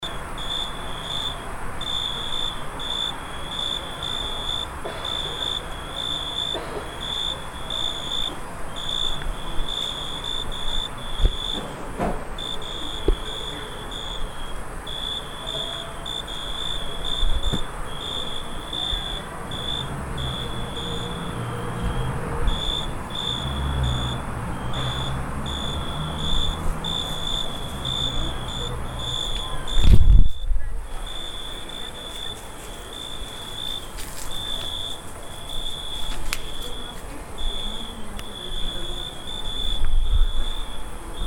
Staré Mesto, Slovenská republika - true crickets
Cvrčky u Susedov na dvore
Bratislava, Slovakia